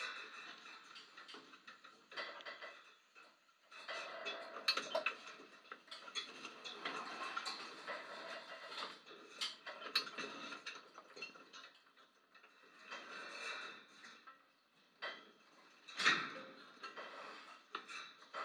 West Loop, Chicago, IL, USA - gate
contact mic recording of chain link fence next door to Baba Pita.